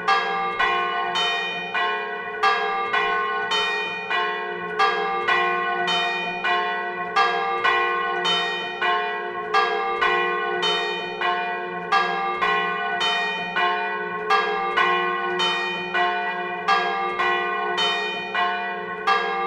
Le Bourg, La Hoguette, France - La Hoguette - Église Saint-Barthélemy
La Hoguette (Calvados)
Église Saint-Barthélemy
Le Glas
Prise de son : JF CAVRO
France métropolitaine, France, 11 September 2020